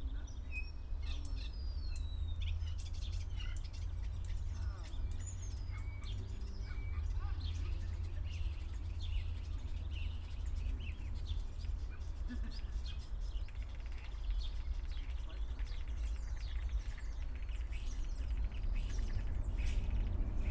Plötzensee, Wedding, Berlin - early spring ambience
walking around Plötzensee, a small Berlin inner-city lake, early spring ambience
(SD702, DPA4060)
Berlin, Germany, 11 March 2017